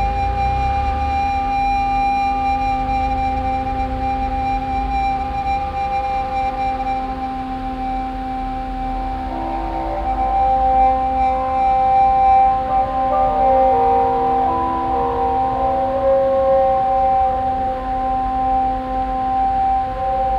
At the temporary sound park exhibition with installation works of students as part of the Fortress Hill project. Here the sound of a work realized by Ana Maria Huluban and the silent chamber group, coming out of one the concrete tubes that are settled all over the hill park area.
Soundmap Fortress Hill//: Cetatuia - topographic field recordings, sound art installations and social ambiences